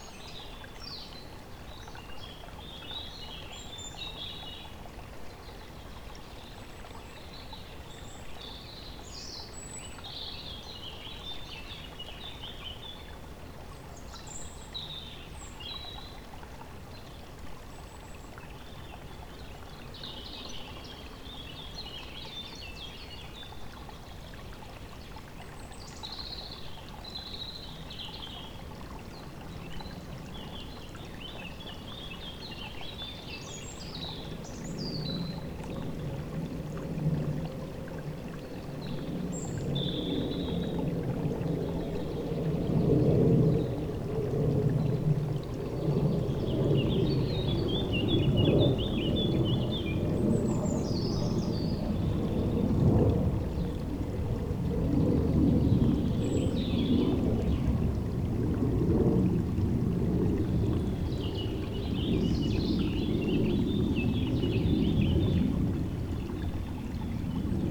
{"title": "wermelskirchen, aschenberg: sellscheider bach - the city, the country & me: creek", "date": "2011-05-07 13:49:00", "description": "the city, the country & me: may 7, 2011", "latitude": "51.15", "longitude": "7.18", "altitude": "206", "timezone": "Europe/Berlin"}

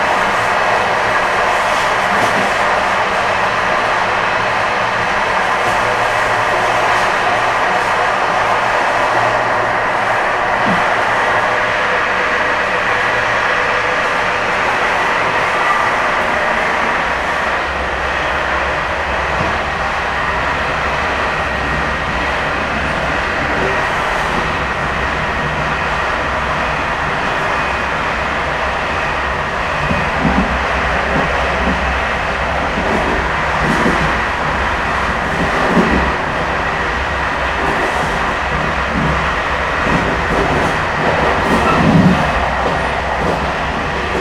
{"title": "Entre Deux", "date": "2011-07-18 13:26:00", "description": "world listening day", "latitude": "48.08", "longitude": "1.88", "altitude": "123", "timezone": "Europe/Paris"}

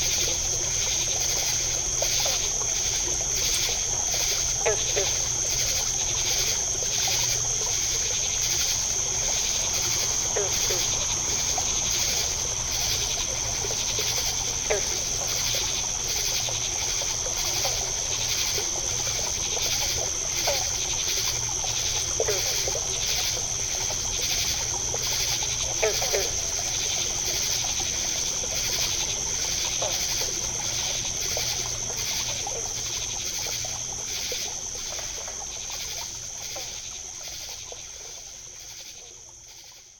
{"title": "Downe, NJ, USA - bog-stream, frogs and insects", "date": "2016-07-31 21:30:00", "description": "a stream exiting a bog softly babbles as insects chatter and frogs gulp and clatter", "latitude": "39.33", "longitude": "-75.08", "altitude": "21", "timezone": "America/New_York"}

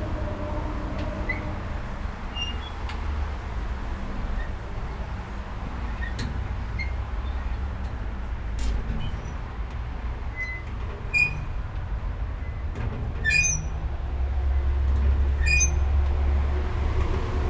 {"title": "Kleiderkästchen im Faulerbad auf der Liegewiese", "date": "2011-07-13 12:50:00", "description": "verlassene nicht gebrauchte Kleiderkästchen für die Liegewiese, heute Kunstinstallationen im Rahmen von Kunst auf der Liegewiese", "latitude": "47.99", "longitude": "7.84", "altitude": "269", "timezone": "Europe/Berlin"}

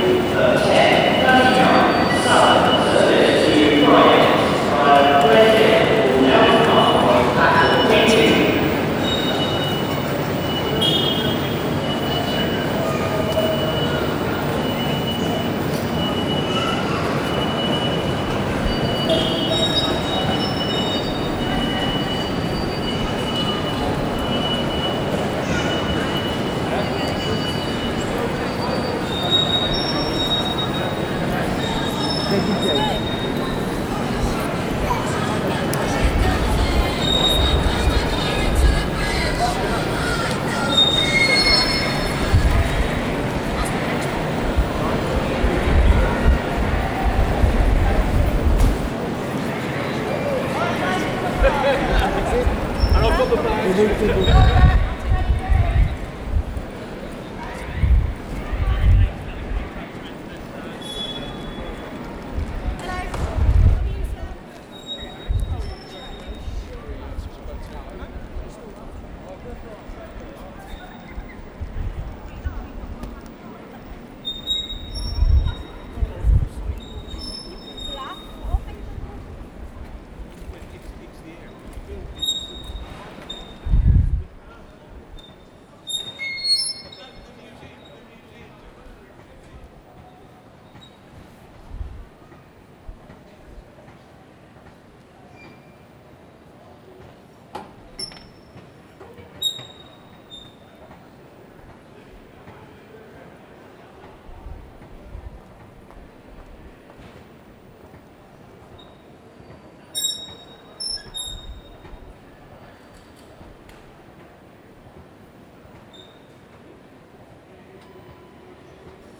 {"title": "Buckingham Palace Rd, London, Vereinigtes Königreich - London - Victoria Station - Escalator", "date": "2022-03-17 10:23:00", "description": "Inside London Victoria Station - steps, people and the sound of an escalator\nsoundmap international:\nsocial ambiences, topographic field recordings", "latitude": "51.50", "longitude": "-0.14", "altitude": "18", "timezone": "Europe/London"}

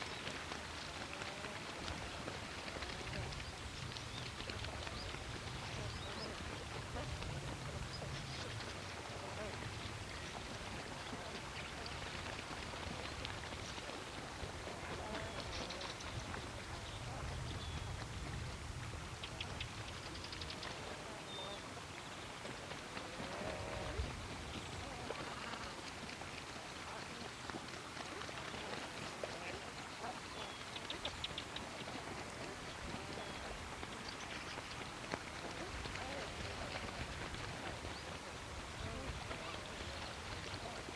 {"title": "Sørvågen, Norway - seabirds cleansing themselves on Lake Ågvanet", "date": "2019-07-22 12:16:00", "description": "Seabirds from the Norwegian Sea come to clean themselves and mate onto the waters of Lake Ågvanet as the the winds pick up gusts through the grasses on a low cliff.", "latitude": "67.89", "longitude": "12.96", "altitude": "60", "timezone": "Europe/Oslo"}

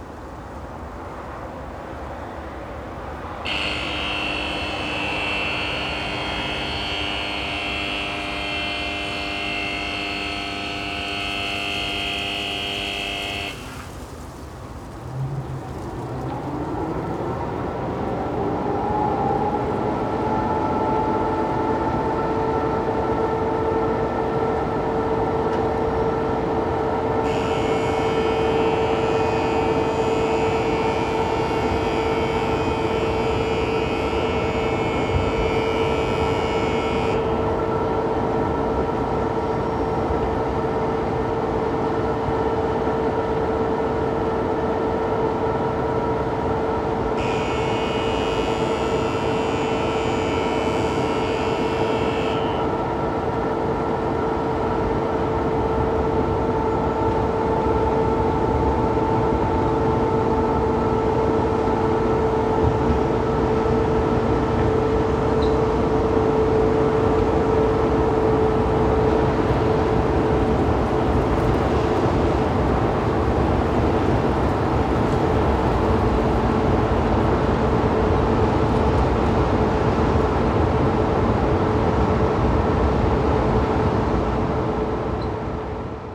{"title": "Grevenbroich, Germany - Coal streaming from the conveyor belts stops, then starts again", "date": "2012-11-02 14:08:00", "description": "Standing 70metres from these streams of coal one feels the dust and grit in the air. The tree trunks have a black layer on the side facing the mine. Water is sprayed into the coal to to prevent the dust. It has some effect but certainly not 100%.", "latitude": "51.07", "longitude": "6.54", "altitude": "73", "timezone": "Europe/Berlin"}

